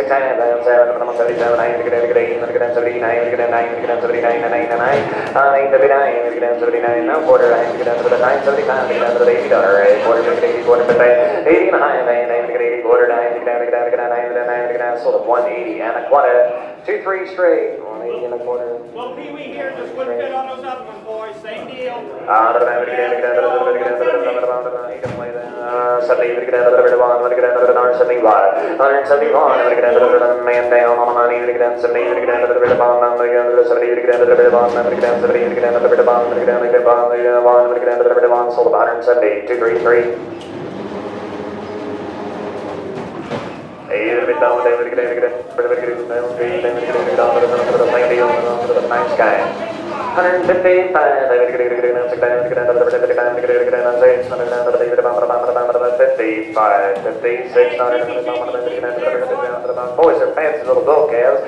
Saint-Joseph, Missouri, États-Unis - Cattel Sale in Saint-Joseph, Missouri (USA)
A man is talking during the sale of the cattle in Saint Joseph, Missouri.
The cattle is passing by, people are bidding and buying the cows by auction.
Sound recorded by a MS setup Schoeps CCM41+CCM8
Sound Devices 788T recorder with CL8
MS is encoded in STEREO Left-Right
recorded in may 2013 in Saint Joseph, Missouri (USA).
St. Joseph, MO, USA, 15 May 2013, 09:01